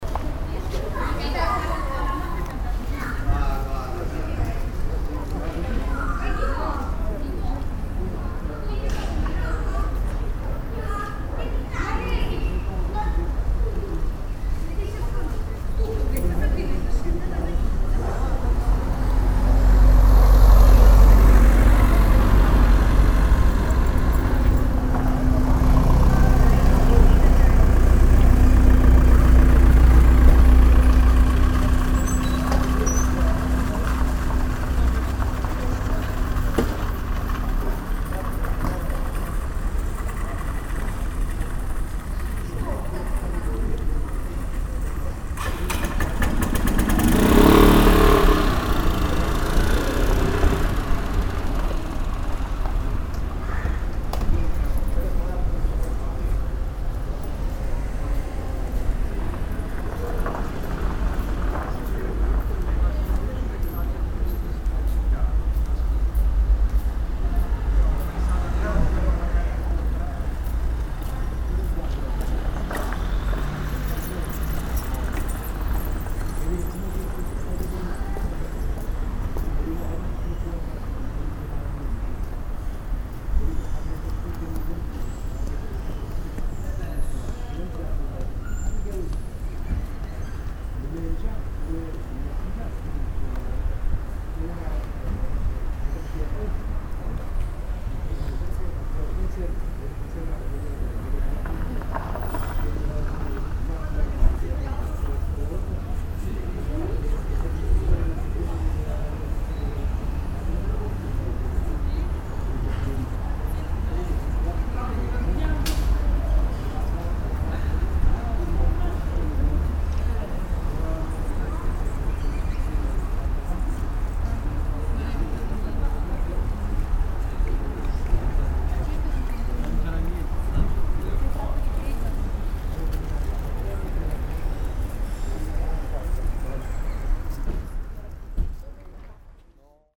on a parking area in the late afternoon, dofferent kind of wheel traffic passing by
soundmap international: social ambiences/ listen to the people in & outdoor topographic field recordings